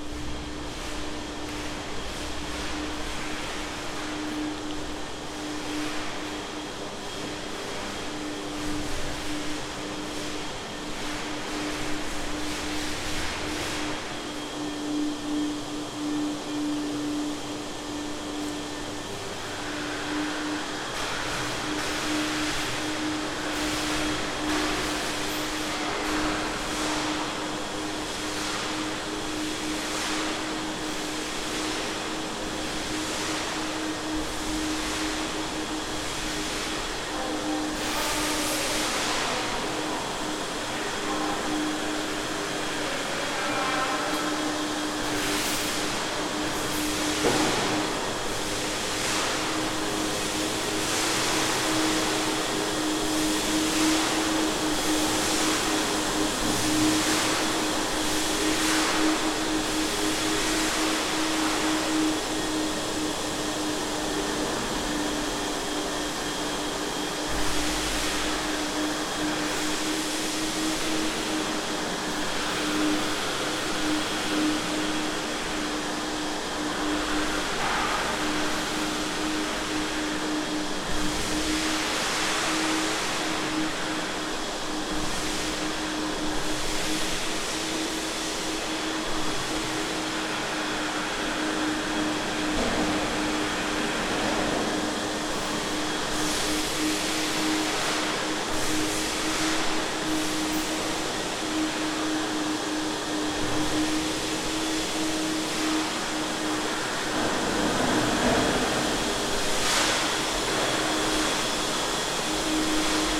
Heavy sound of the cleanning a hallway at Convento de Cristo in Tomar, also voices and resonation of the space. Recorded with a pair of Primo 172 capsules in AB stereo configuration onto a SD mixpre6.